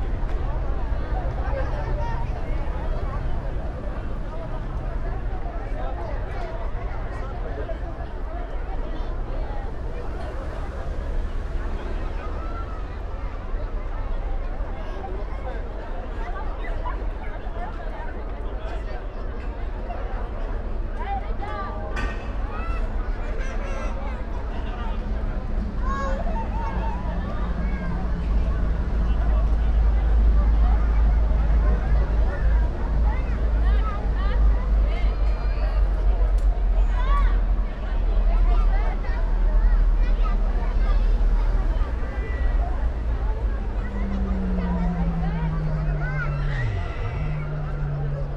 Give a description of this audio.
people after their long paths and many borders, again waiting to continue north, after many years of quiet, forgotten checkpoint territory, old border crossing is filled with refugees, police and army, area transformed into huge guarded camp, border exists again ...